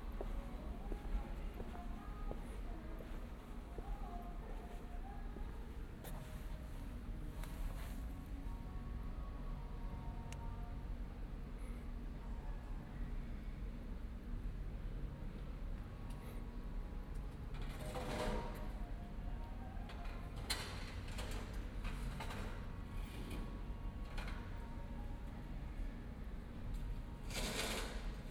One is looking for cigarettes, the walker comes home...
Aarau, Switzerland, 28 June 2016, 23:57